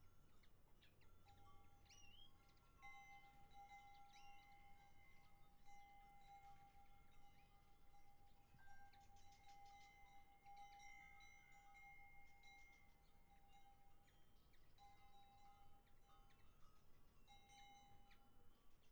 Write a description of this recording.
Ein Tag später zur gleichen Zeit: Die Ziegen ziehen allmählich weiter.